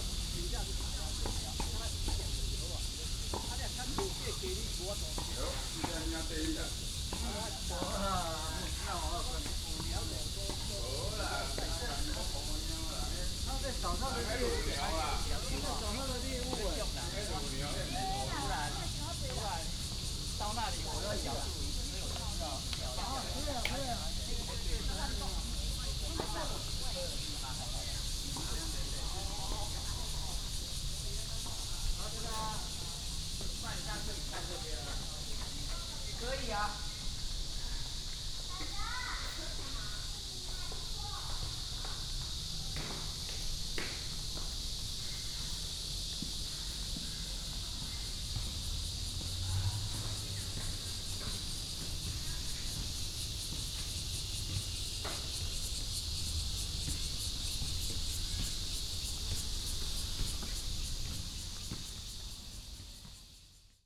Walking in the tennis court, Cicadas, sound of birds, Traffic sound